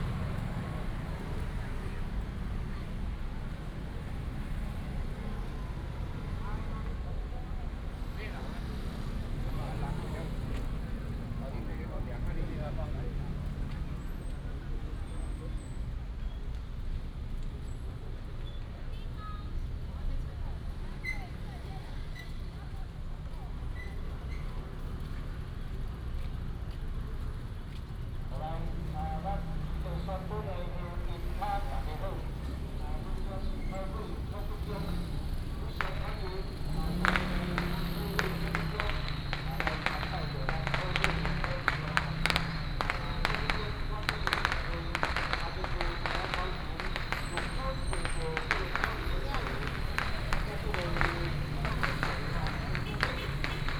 Fuxing Rd., Huwei Township - waiting for Baishatun Matsu
Firecrackers and fireworks, Many people gathered at the intersection, Baishatun Matsu Pilgrimage Procession